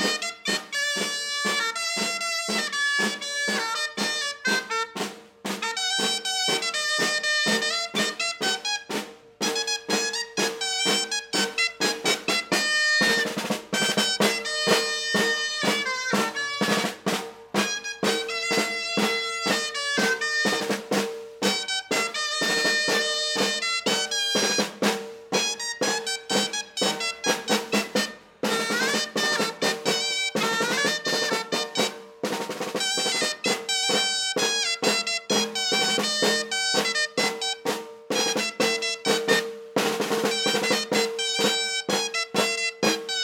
Carrer Santíssima Trinitat, Tàrbena, Alicante, Espagne - Tàrbena - Province d'Alicante - Espagne XXII sème fête gastronomique et Artisanal de Tàrbena - Inauguration de la 2nd Journée
Tàrbena - Province d'Alicante - Espagne
XXII sème fête gastronomique et Artisanal de Tàrbena
Inauguration de la 2nd Journée
Les 2 jeunes musiciens parcourent les rues de la ville
Ambiance 2
ZOOM H6